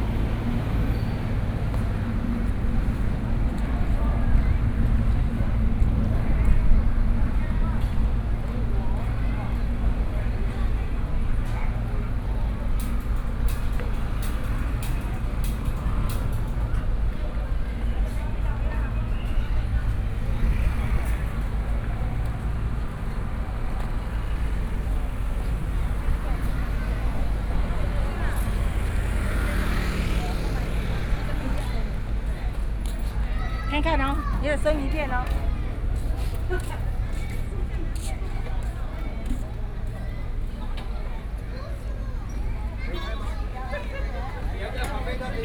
In the fishing port, Traffic Sound, The weather is very hot